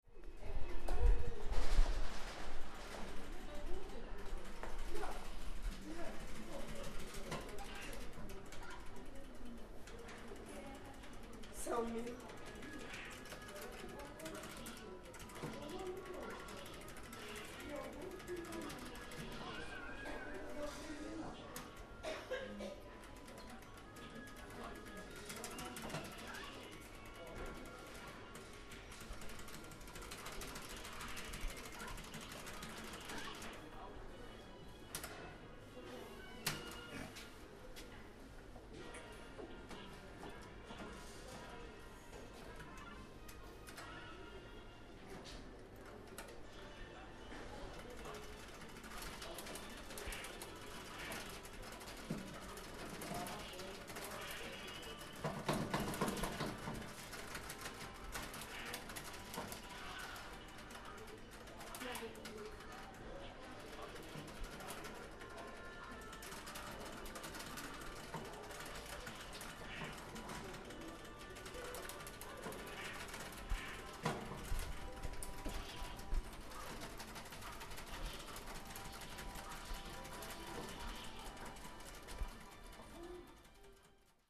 Baltijaam insidemarket kids playing videogame
2 kids are playing videogame inside the baltimarket at Baltijaam. (jaak sova)